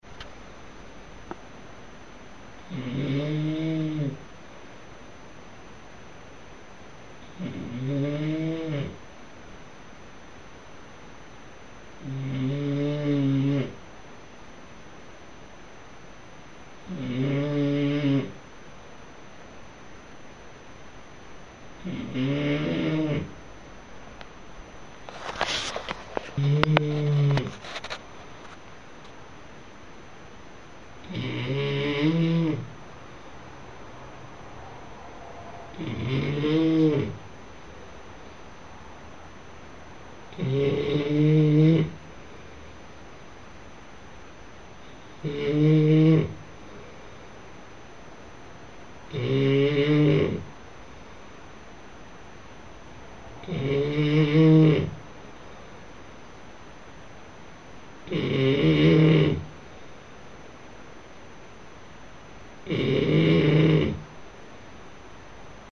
Sagres (Algarve)
Sagres. 2009/8/9. 9:08 a.m. Im trying to sleep with a snoring friend in the same room.